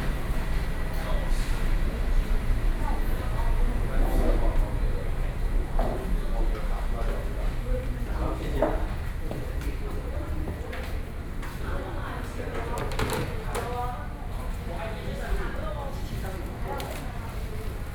Taipei, Taiwan - At the burger joint in front of the ordering counter